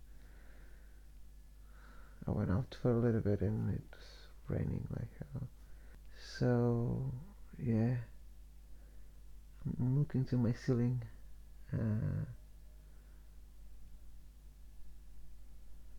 Hatfield Street
Listen to this, while you are walking to somewhere nice.
United Kingdom, European Union, 16 May